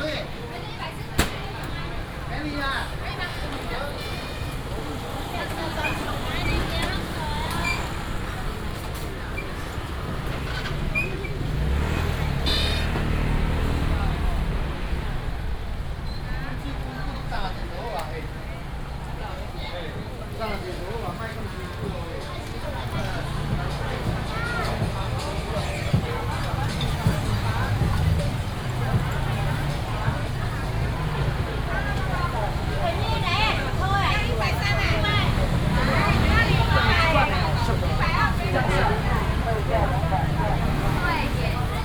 日新市場, Dali Dist., Taichung City - vendors peddling
traditional market, traffic sound, vendors peddling, Binaural recordings, Sony PCM D100+ Soundman OKM II
Taichung City, Taiwan, 19 September, 10:30